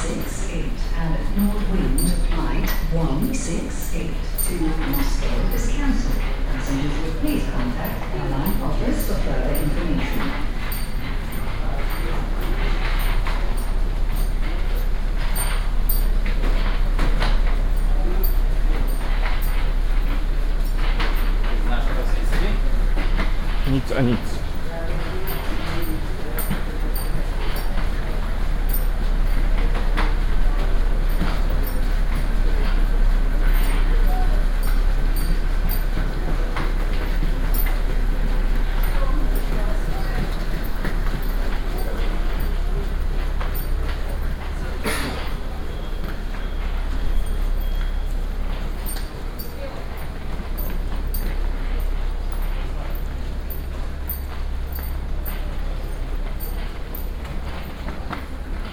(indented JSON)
{
  "title": "Pulkovo Airport, Sankt-Peterburg, Russia - (610e) Airport announcements",
  "date": "2019-09-04 12:32:00",
  "description": "Airport binaural soundwalk with some announcements in the background.\nrecorded with Soundman OKM + Sony D100\nsound posted by Katarzyna Trzeciak",
  "latitude": "59.80",
  "longitude": "30.27",
  "altitude": "24",
  "timezone": "Europe/Moscow"
}